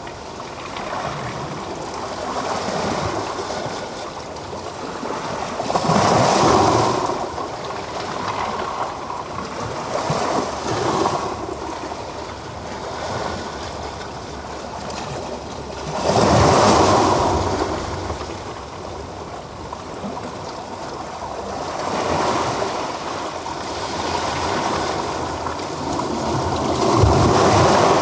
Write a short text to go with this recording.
Recorded with a Sound Devices MixPre-3 and a pair of DPA 4060s.